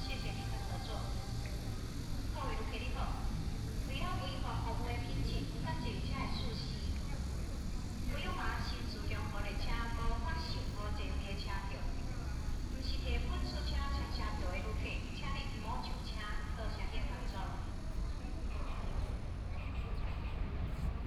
Yilan Station, Yilan City - Walking in the station platform
Walking in the station platform
Sony PCM D50+ Soundman OKM II